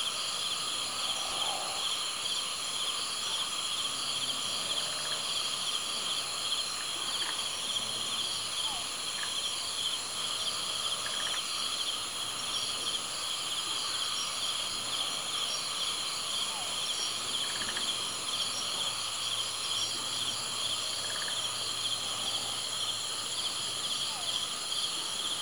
Wan Tsai South Campsite at midnight, Hong Kong, Sai Kung, 西貢 - Wan Tsai South Campsite at midnight
The campsite is located on Wan Tsai Peninsula in the Sai Kung West Country Park (Wan Tsai Extension), next to the Long Harbour (Tai Tan Hoi). You can feel the birds and insect orchestra at 4 a.m. alongside with some snoring of campers in the recording.
營地位於西頁西郊野公園灣仔擴建部分內的灣仔半島，鄰近大灘海。你可以聽到深夜四時的昆蟲雀鳥交響樂，加入一些營友的鼻鼾聲。
#Night, #Cricket, #Campsite, #Snoring, #Bird